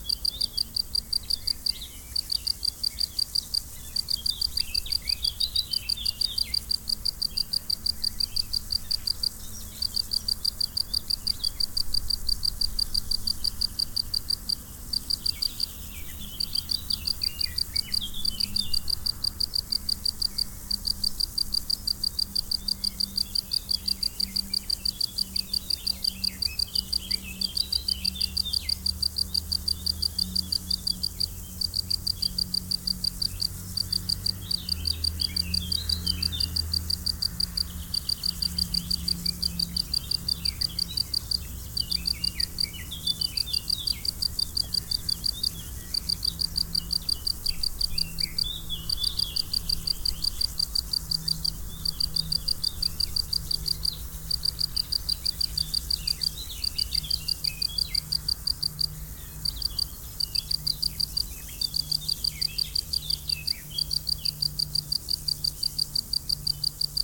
Rue de Vars, Chindrieux, France - Tutti d'insectes
Prairie sèche, stridulations des grillons, sauterelles, criquets, bruits de la circulaton sur la RD991. Quelques oiseaux.
France métropolitaine, France